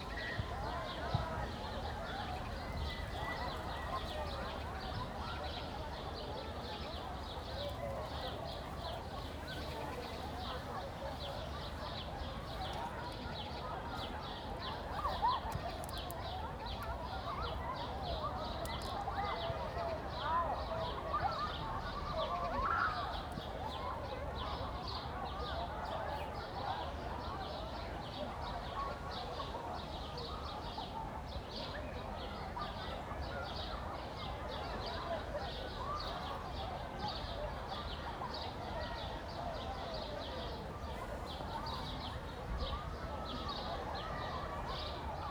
Westufer des Orankesees, Orankestrand, Berlin, Germany - Kids enjoying open air swimming heard from across the lake
Such beautiful warm weather - 28C, sun and blue sky. Kids enjoying open air swimming pools is one of Berlin's definitive summer sounds. Regularly mentioned as a favourite. The loudspeaker announcements reverberate around the lake.